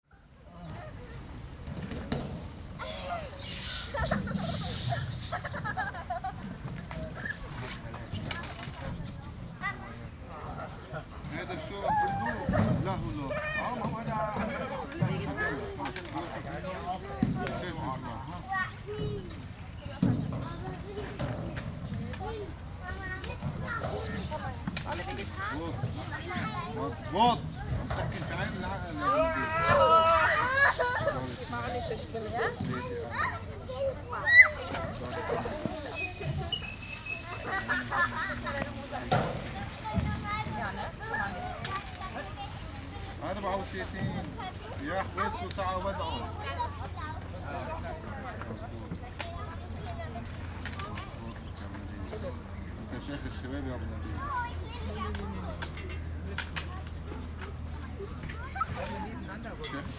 Sat., 30.08.2008, 17:00
children, playground slide, arab men gaming
Berlin, 30 August